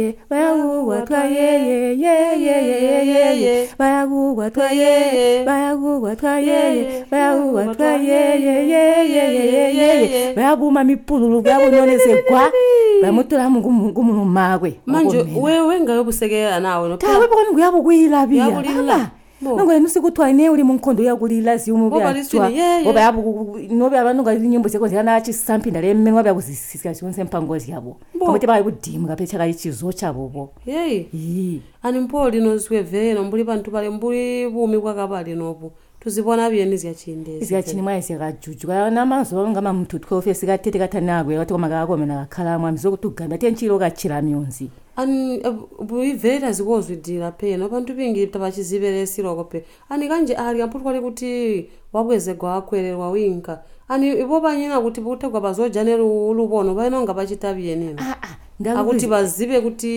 Tusimpe Pastoral Centre, Binga, Zimbabwe - what Banene told me...
...during the second day of our workshop, we talked at length about the culture among the Batonga and how it is passed on traditionally especially among women and children… in one of the one-to-one training sessions Lucia and Eunice record this beautiful conversation exchanging about what they learnt from their grandmothers…
a recording made during the one-to-one training sessions of a workshop on documentation skills convened by Zubo Trust; Zubo Trust is a women’s organization bringing women together for self-empowerment.
6 July 2016, ~12:00